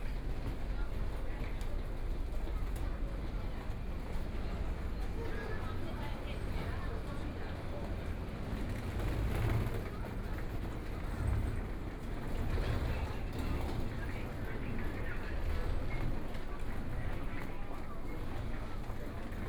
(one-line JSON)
{"title": "Hualien Station, Hualien City - soundwalk", "date": "2014-01-18 14:05:00", "description": "Messages broadcast station, From the station platform, Via underground passage, Then out of the station, Binaural recordings, Zoom H4n+ Soundman OKM II", "latitude": "23.99", "longitude": "121.60", "timezone": "Asia/Taipei"}